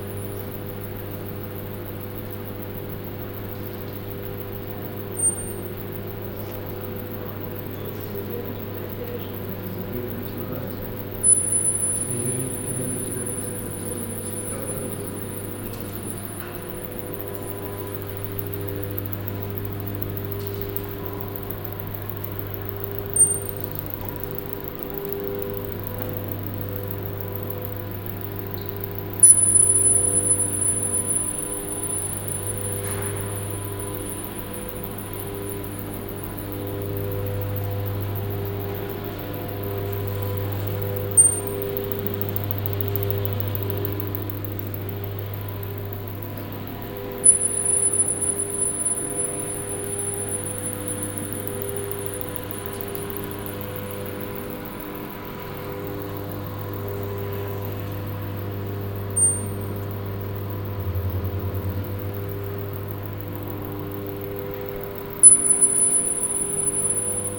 Berlin, Hamburger Bhf, exhibition - berlin, hamburger bhf, exhibition
Inside the right wing of the exhibition building on the first floor. The sound of the Ikeda exhibition db and visitors walking around - here the black room.
soundmap d - social ambiences, art places and topographic field recordings
7 February 2012, 15:31